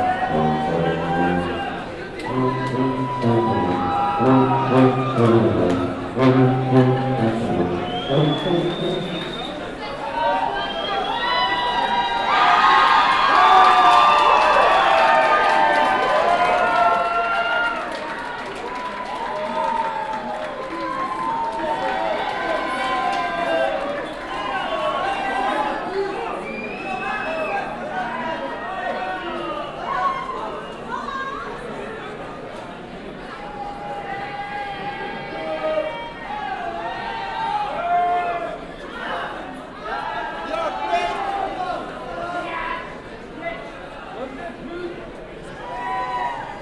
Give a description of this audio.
equipment used: Olympus LS-10 & OKM Binaurals, As the late night turns to early morning, the tuba plays on...